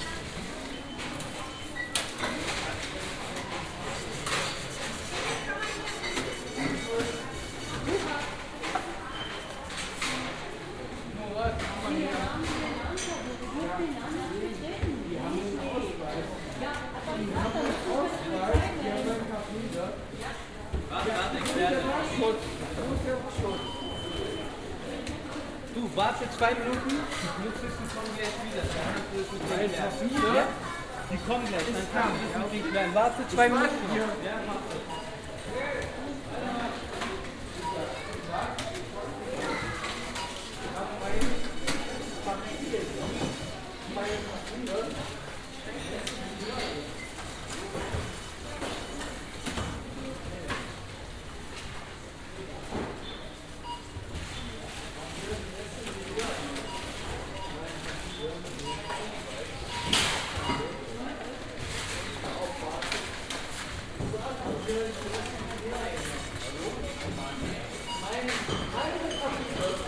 Plus-Markt Rathauspassage
sa, 14.06.2008, 18:10
stress im plus, betrunkener erhält ladenverbot und will seinen ausweis zurück, polizei kommt, ist aber nicht zuständig, weil sie sich um einen ladendiebstahl kümmern muss
14 June 2008, ~18:00